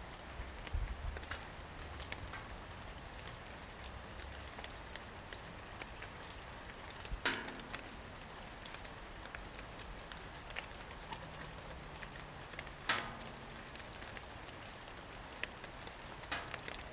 Goldspink Ln, Newcastle upon Tyne, UK - Abandoned playground near goldspink lane
Walking Festival of Sound
13 October 2019
Abandoned playground, underneath play equipment, rain hitting metal.